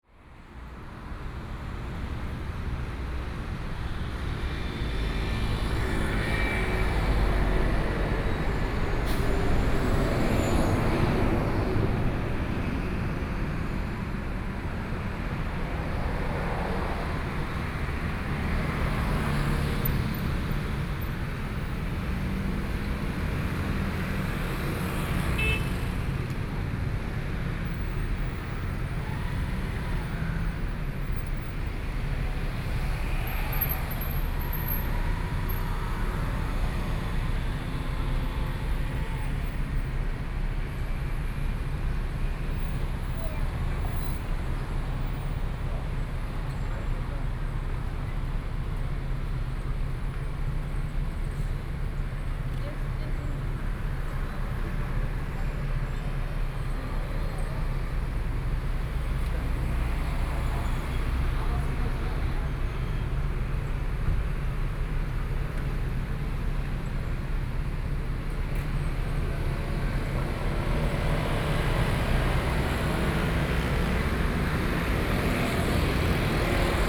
Zhongzheng Rd., Hualien City - Traffic Sound
Standing on the roadside, Traffic Sound
Binaural recordings